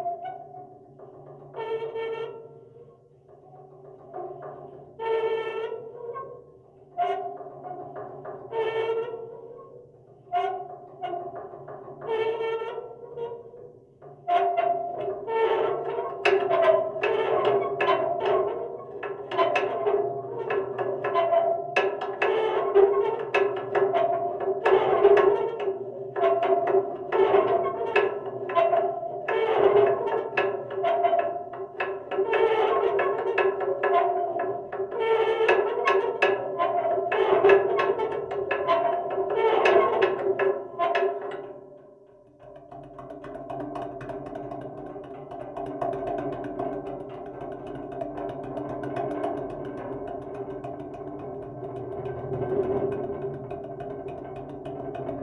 Corfu, Greece - Sound exploration no.1: Old Fortress, Corfu Island
Record made by: Alex and Konstantina